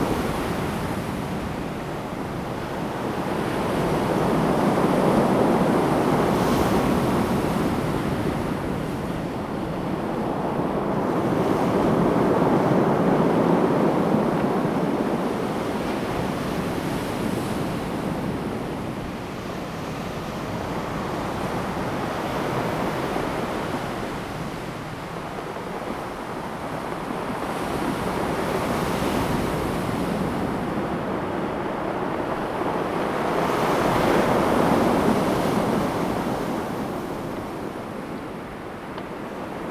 Nantian Coast, 台東縣達仁鄉 - sound of the waves
Waves, Rolling stones
Zoom H2n MS+XY
Taitung County, Daren Township, 台26線